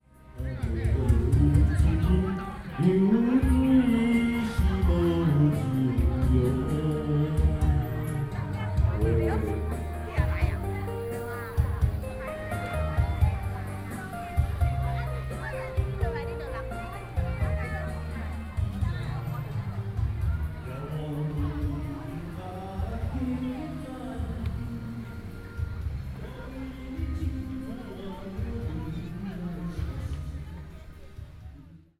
Beitou - Public activities singing performances